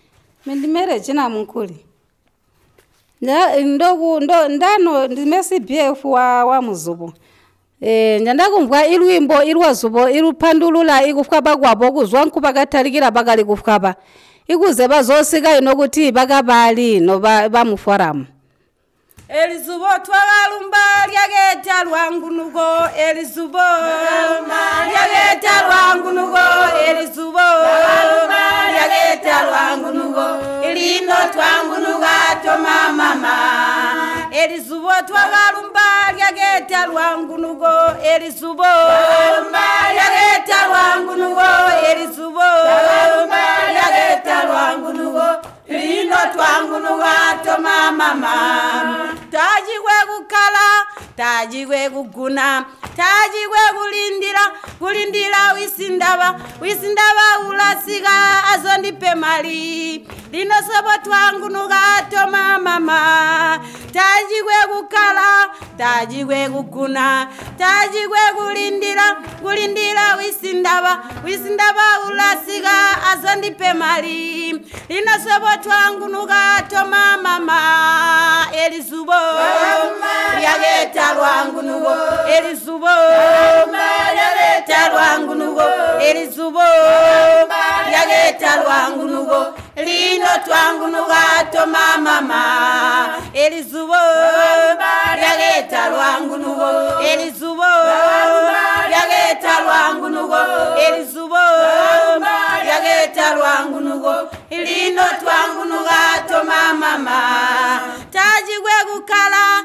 This recording of the Zubo song was made a month later by Regina Munkuli herself, the community based facilitator of Zubo Trust after training during the radio project "Women documenting women stories" with the rural women of Zubo Trust.
Zubo Trust is a women’s organization in Binga Zimbabwe bringing women together for self-empowerment.
Zimbabwe, July 2016